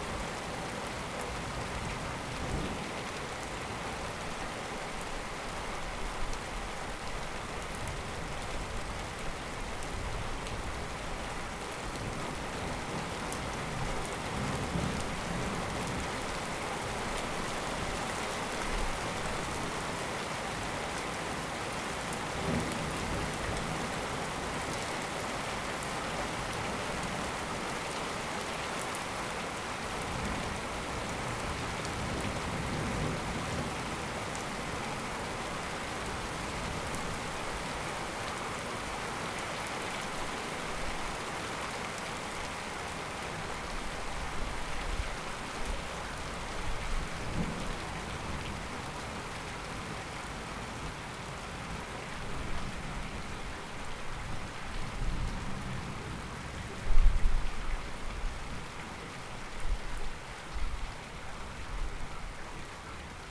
Rue de Vars, Chindrieux, France - Orage chaotique
Orage très irrégulier avec pluie imprévisible, les coups de tonnerre se déroulent sans grondements prolongés, captation depuis une fenêtre du premier étage.